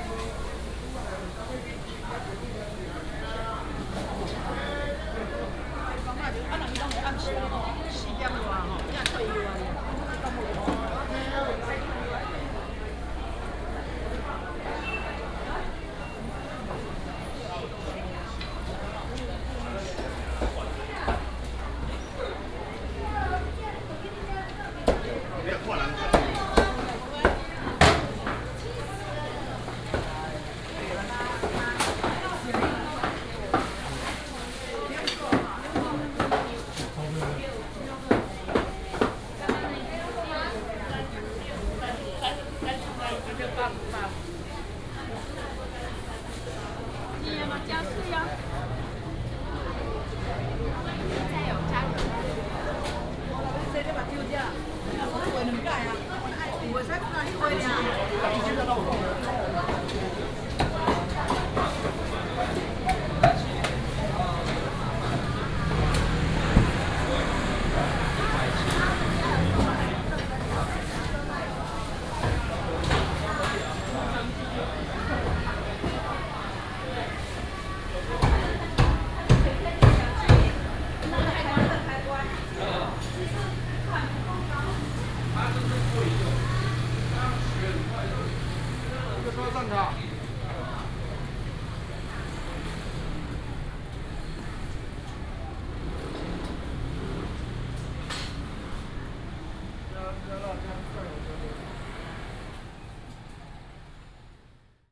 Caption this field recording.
Walking in the traditional market